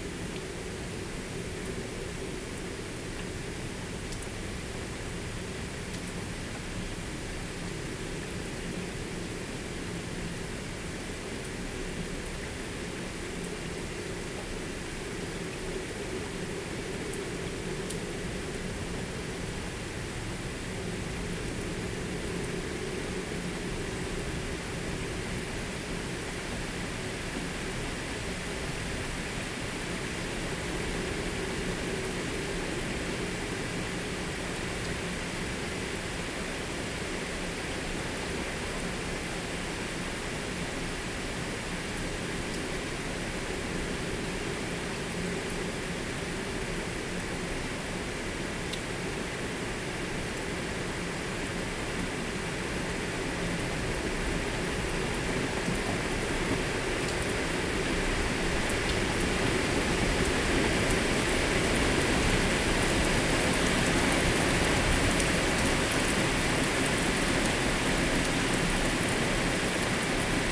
Colchester, Essex, UK - Thunderstorm Colchester, Essex. july 19th - Early Morning
Thunderstorm Colchester, Essex. july 19th - Early Morning
Original recording was 3 hours in Length - excerpt.
19 July 2014